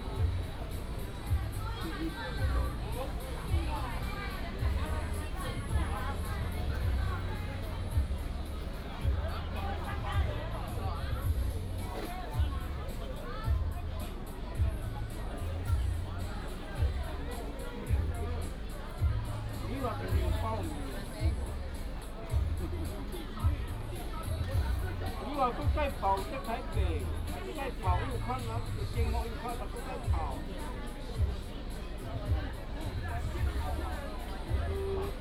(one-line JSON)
{"title": "金峰鄉正興介達國小, Taitung County - Walking around the school", "date": "2018-04-04 09:13:00", "description": "School and community residents sports competition, Cheer cheers", "latitude": "22.60", "longitude": "121.00", "altitude": "46", "timezone": "Asia/Taipei"}